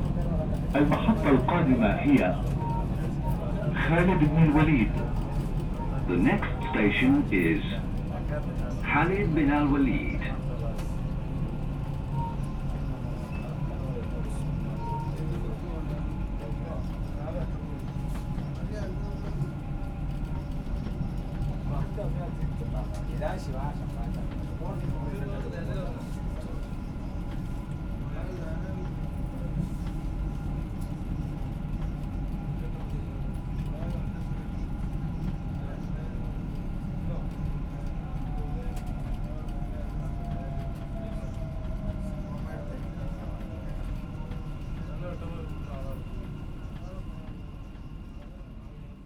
Bur Dubai - Dubai - United Arab Emirates - Dubai Metro - Heading Khalid Bin Al Waleed Station
Interior recording inside the metro cabin, from the Karama Station to one near Burjuman Center.